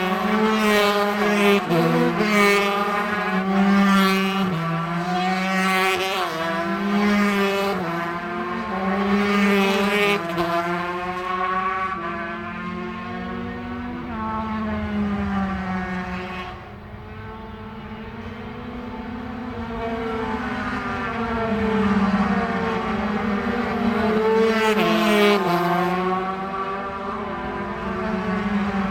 Leicester, UK - british superbikes 2002 ... 125 ...
british superbikes 2002 ... 125 qualifying ... mallory park ... one point stereo mic to minidisk ... date correct ... time not ...